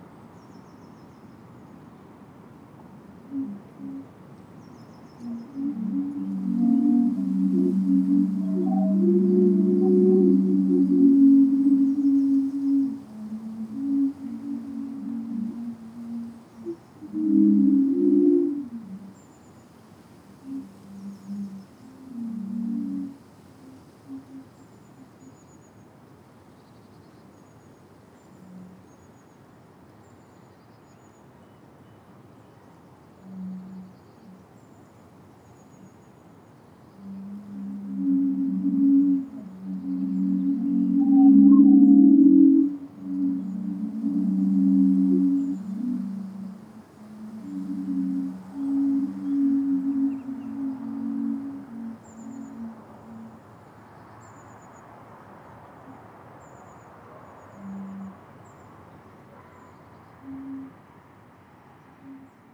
Spark Bridge - Bamboo Wind Flutes
Five 10' high home-made bamboo wind flutes standing vertically in a circle of about 2m diameter. Four thick and one thinner bamboo flute. The wind was rising during the afternoon, a precursor to storm Ciara. The higher pitched notes come from the thinner bamboo. If you would like to commission a set of these wind flutes, then please get in touch.
(SDMixpre10 + 2 spaced DPA4060)
9 February 2020, ~03:00